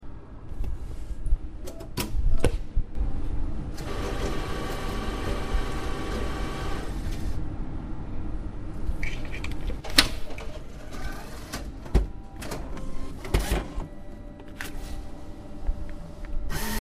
Nürnberg, Ostendstraße, 100€ cash
me, getting 100€ by a cash machine
Nuremberg, Germany